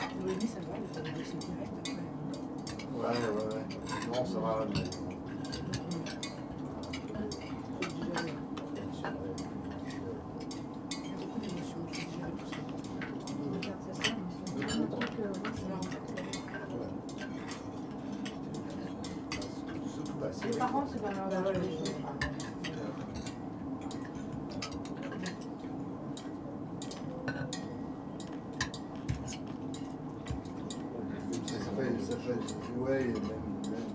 Centre Ville, Aix-en-Provence, Fr. - lunch murmur
murmur during lunch in a tent, rattling of a mobile heating
(Sony PCM D50)
Aix-en-Provence, France